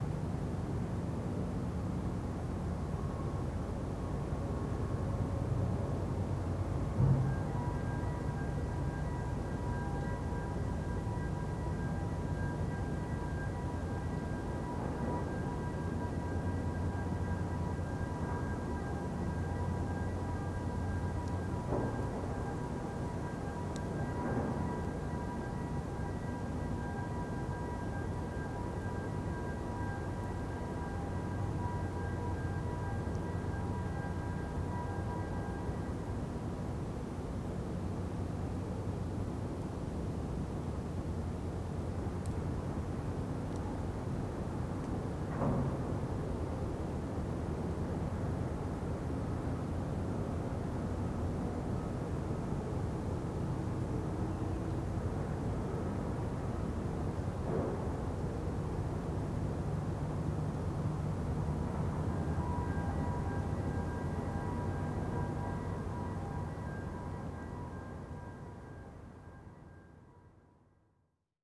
Stogi, Danzig, Polen - Stogi Plaza near Gdansk - signals of faraway cranes disembarking vessels early in the morning
Stogi Plaza near Gdansk - signals of faraway cranes disembarking vessels early in the morning. [I used Olympus LS-11 for recording]
Gdańsk, Poland